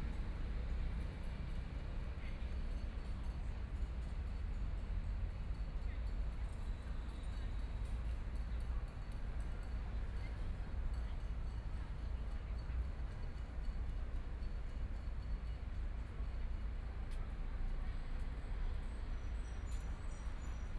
Hankou Road, Shanghai - In the corner of the road
In the corner of the road, The crowd, Bicycle brake sound, Traffic Sound, Binaural recording, Zoom H6+ Soundman OKM II
Shanghai, China, 25 November 2013, 15:51